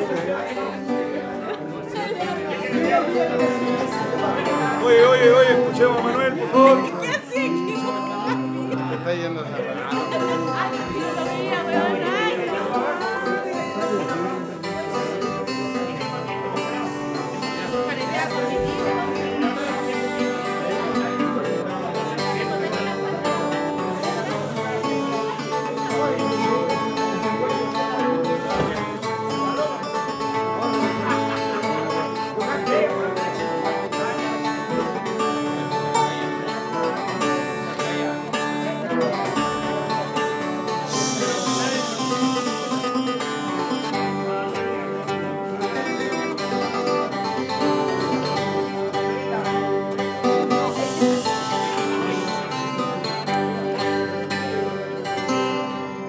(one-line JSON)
{"title": "Subida Ecuador, Valparaiso, Chile - Singing during a funeral, during wake of Jorge Montiel (Valparaiso, Chile)", "date": "2015-11-21 23:00:00", "description": "During the wake of the death Jorge Montiel, Manuel Sánchez Payador sing to his memory, improvising in \"décimas\".\nVoices of the people around in background.\nRecorded in Valparaiso, Chile, during a residency at Festival Tsonami 2015.\nRecorded by a MS Setup Schoeps CCM41+CCM8\nIn a Cinela Leonard Windscreen\nSound Devices 302 Mixer and Zoom H1 Recorder\nSound Reference: 151121ZOOM0015", "latitude": "-33.05", "longitude": "-71.62", "altitude": "46", "timezone": "America/Santiago"}